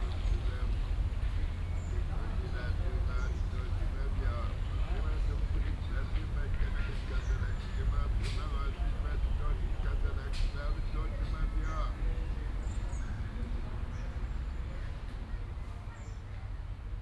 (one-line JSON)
{"title": "cologne, stadtgarten, obdachloser im gebüsch", "date": "2008-06-11 21:43:00", "description": "an gebüschen, nahe stadtgarten biergarten, hinter konzertsaal -\nstereofeldaufnahmen im juni 08 - nachmittags\nproject: klang raum garten/ sound in public spaces - in & outdoor nearfield recordings", "latitude": "50.94", "longitude": "6.94", "altitude": "51", "timezone": "Europe/Berlin"}